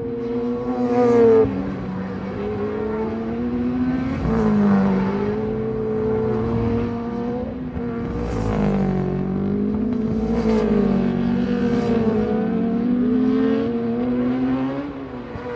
British Superbikes 2005 ... Superbikes(contd) ... Cadwell Park ... one point stereo mic to minidisk ...
East Midlands, England, United Kingdom, 27 August 2005